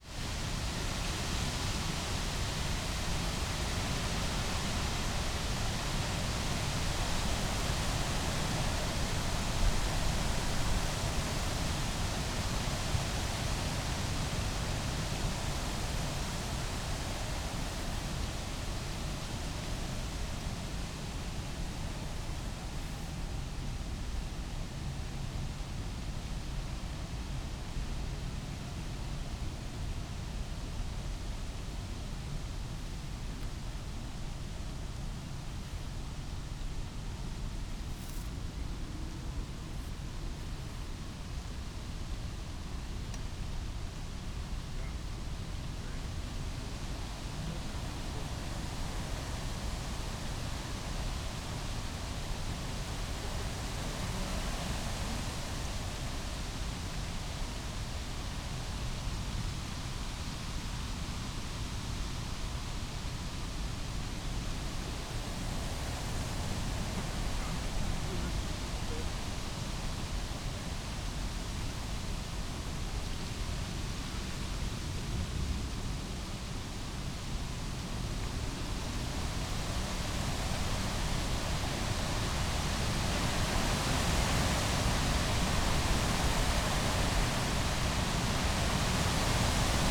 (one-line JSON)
{"title": "Tempelhofer Feld, Berlin - wind in birch trees", "date": "2019-08-17 15:50:00", "description": "place revisited (often here, if possible...) wind in the birches\n(Sony PCM D50, Primo EM172)", "latitude": "52.48", "longitude": "13.40", "altitude": "42", "timezone": "GMT+1"}